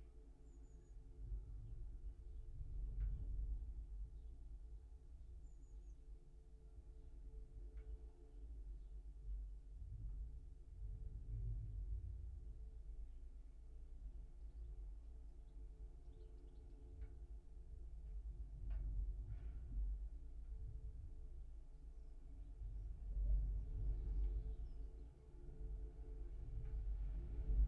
in the Forest Garden - tripod ladder
Strong winds ebb and flow through the Forest Garden caught in the Japanese tripod ladder, school children in the playground, Chaffinch, Blackbird and House Sparrows, vehicles rush past on the lane.